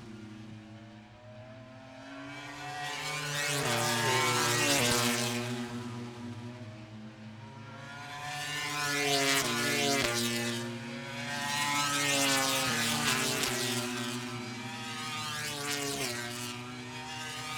Silverstone Circuit, Towcester, UK - british motorcycle grand prix 2021 ... moto grand prix ...
moto grand prix free practice three ... copse corner ... dpa 4060s to Zoom H5 ...